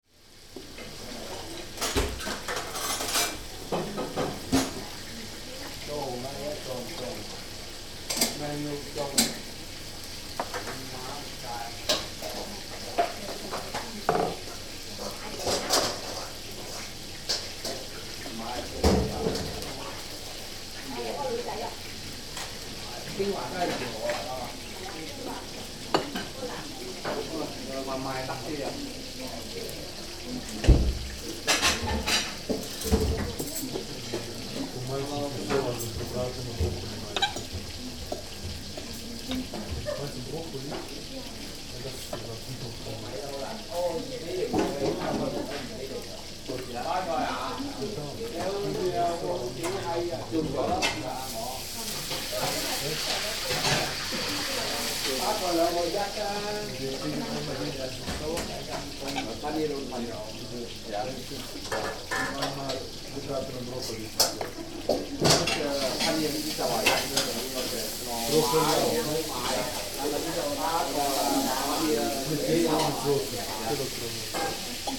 köln, maastrichter str., king wah - chinese food store
11.03.2009 21:30 alteingesessene chinesische garküche in der maastrichter str., köln / old-established chinese food store
Cologne, Germany